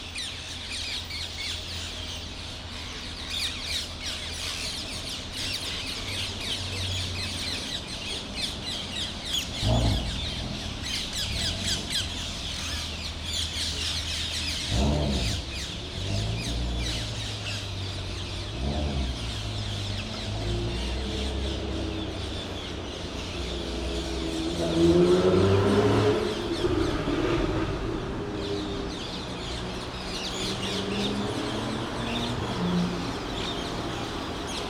Am Leystapel, Thunmarkt, Köln - rush hour /w Rose-ringed parakeet
gathering place of Rose-ringed parakeets (Halsbandsittich, Kleiner Alexandersittich, Psittacula krameri). There are thousands living in Cologne. At this place near a busy road, hundreds of them gathering in a few trees in the evening.
(Sony PCM D50)